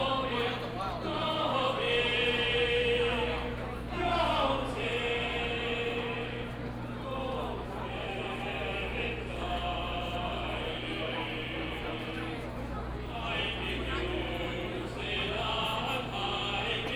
中正區幸福里, Taipei City - Walking through the site in protest
Walking through the site in protest, People and students occupied the Legislature
Binaural recordings
Taipei City, Taiwan, 19 March 2014